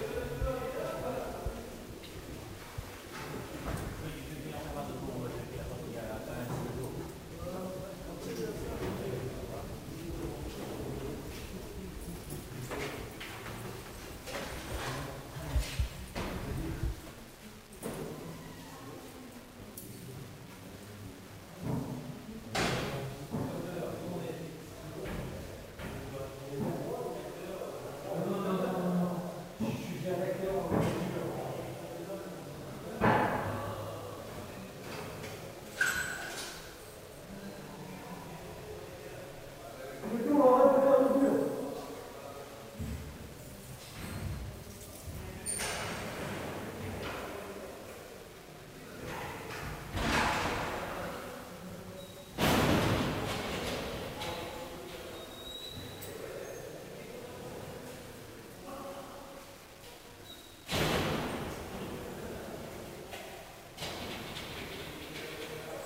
visite de la prison
enregistré lors du tournage fleur de sel darnaud selignac france tv